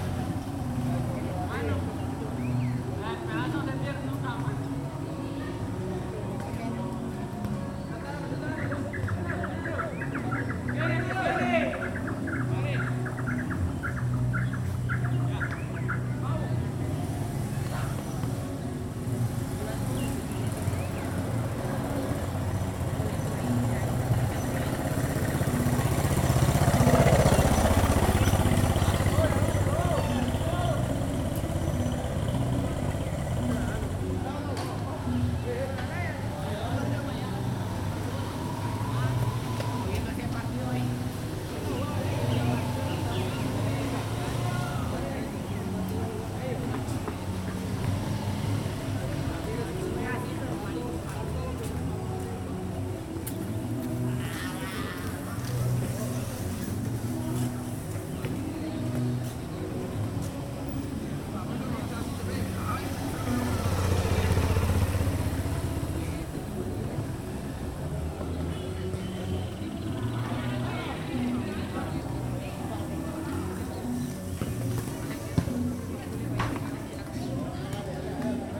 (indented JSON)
{
  "title": "Cancha de futbol, Mompós, Bolívar, Colombia - Partido en la tarde",
  "date": "2022-04-22 15:32:00",
  "description": "Un grupo de jóvenes juegan fútbol en una cancha de tierra junto al río Magdalena",
  "latitude": "9.23",
  "longitude": "-74.42",
  "altitude": "23",
  "timezone": "America/Bogota"
}